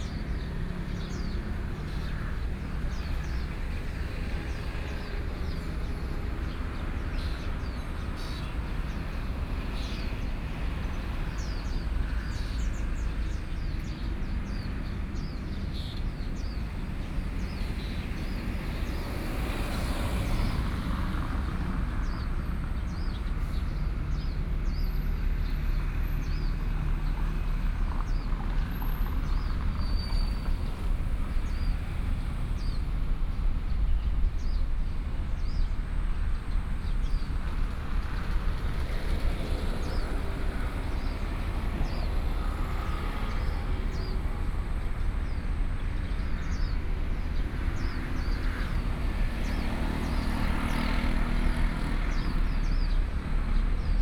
Sec., Nongquan Rd., Yilan City - Traffic Sound
Traffic Sound, Road corner
Sony PCM D50+ Soundman OKM II
Yilan City, Yilan County, Taiwan, 22 July 2014